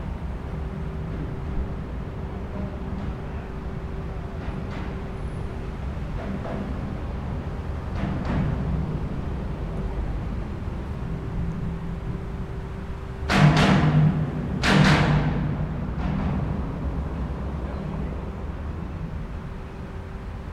La Motte-Servolex, France - Passerelle
Passerelle cycliste de l'Avenue verte traversant la Leysse, en face un pont routier à grande circulation. ZoomH4npro posé sur une rambarde .